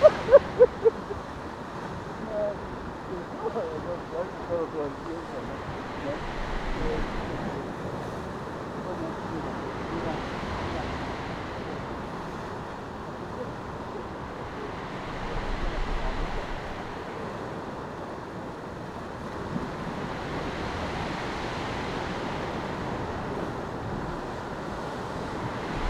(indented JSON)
{
  "title": "Funchal, hotel district, pier - crevice in the pier floor",
  "date": "2015-05-09 12:39:00",
  "description": "recording of the insides of a hollow, concrete pier. microphones very close to a small opening in deteriorated concrete. the pulsing swish sound is made by air being pushed by the big waves through the small crack. muffled conversations.",
  "latitude": "32.64",
  "longitude": "-16.94",
  "altitude": "7",
  "timezone": "Atlantic/Madeira"
}